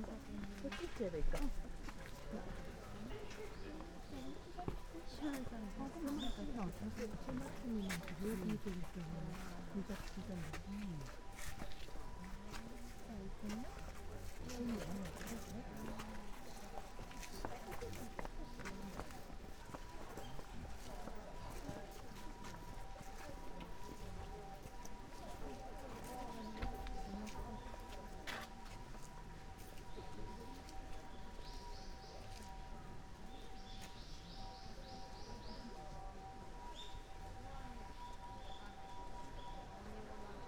hokokuji temple, bamboo forest, kamakura, japan - diving through deep greenish blue